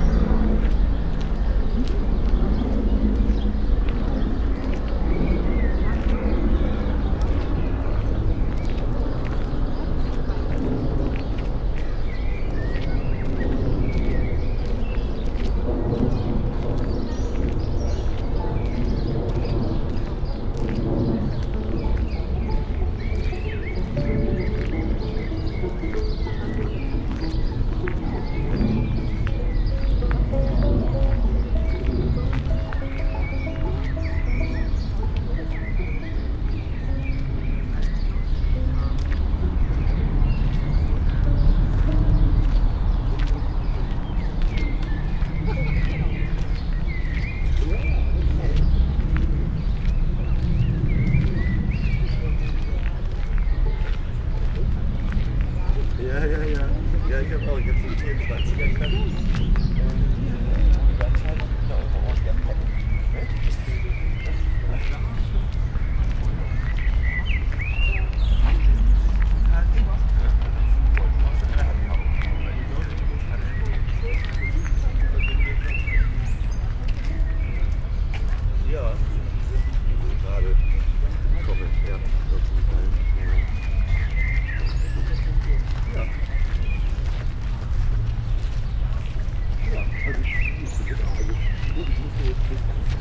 slow walk on sandy pathway, bikers, joggers, walkers, talkers, blackbirds ...
16 May, 19:50